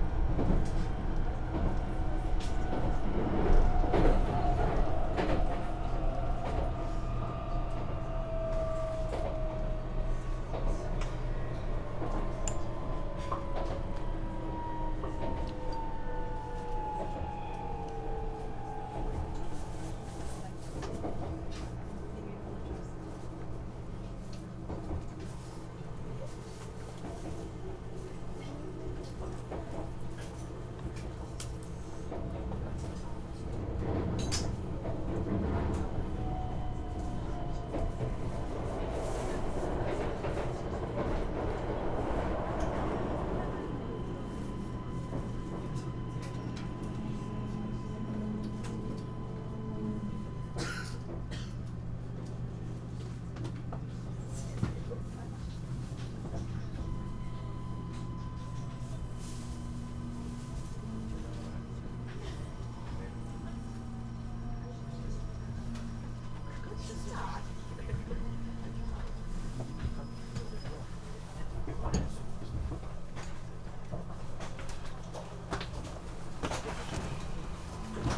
keisei main line, Chiba Prefecture, Japan - skyliner, 9'08''
skyliner, express train, from narita airport to ueno station, train passes different space conditions